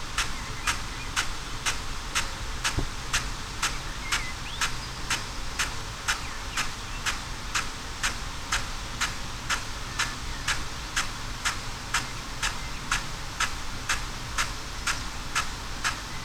Green Ln, Malton, UK - field irrigation system ...
field irrigation system ... xlr SASS to Zoom H6 ... SASS on back of tractor at the furthest arc of the spray unit before it kicks back and tracks back ...
24 May, 06:22